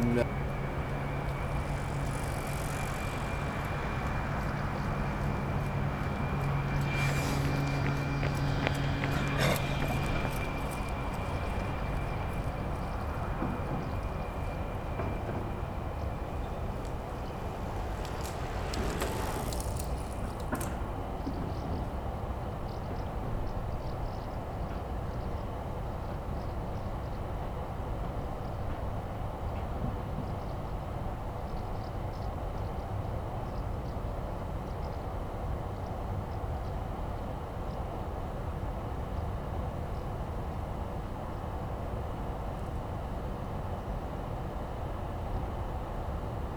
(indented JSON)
{
  "title": "berlin wall of sound-gueffroy memorial. j.dickens 020909",
  "latitude": "52.46",
  "longitude": "13.47",
  "altitude": "37",
  "timezone": "Europe/Berlin"
}